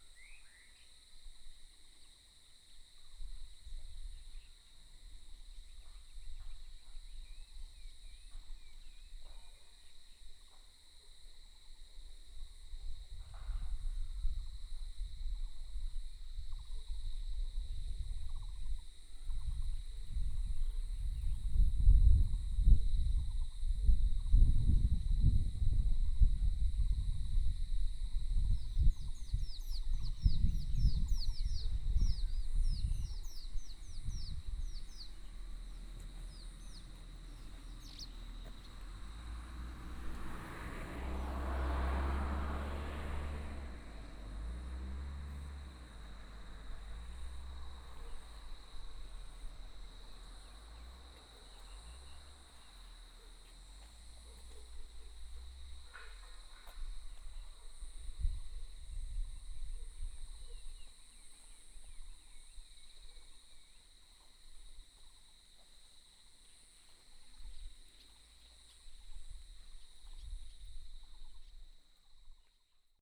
東源國家重要濕地, Mudan Township - Beside the wetland
Beside the wetland, Traffic sound, Birds sound, There is construction sound in the distance, Frog croak, Dog barking